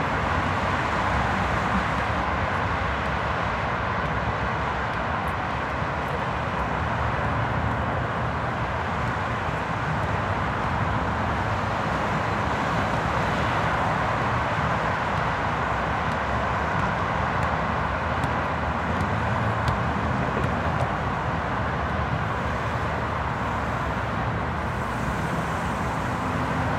Sound of traffic from Franklin D. Roosevelt East River Drive commonly known as the FDR Drive.
Also in the background sounds from the basketball park.